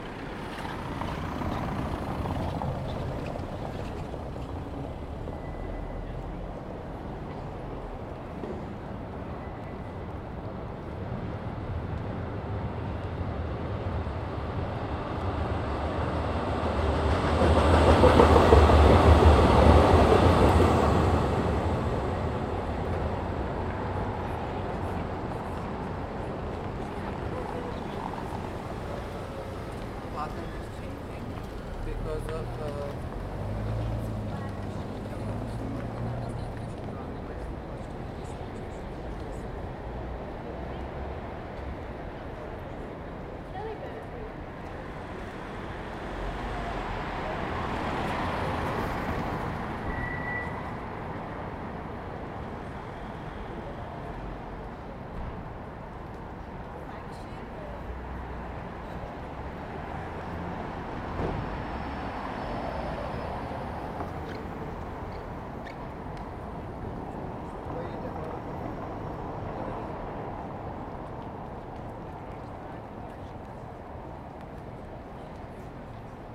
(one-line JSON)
{"title": "Jindřišská, Praha-Nové Město, Česko - Prague trams and traffic", "date": "2019-07-05 17:50:00", "description": "Watching traffic in central Prague. Trams, cars, people walking and talking.\nZoom H2n, 2CH, handheld.", "latitude": "50.08", "longitude": "14.43", "altitude": "204", "timezone": "Europe/Prague"}